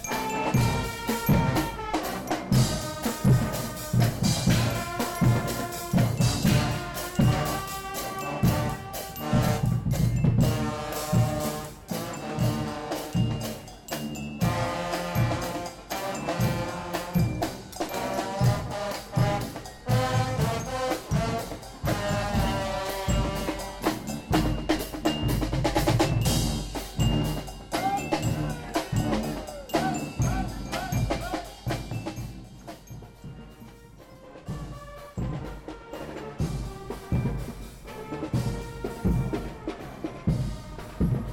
Guggen brass music european festival in Prague
Prague, Czech Republic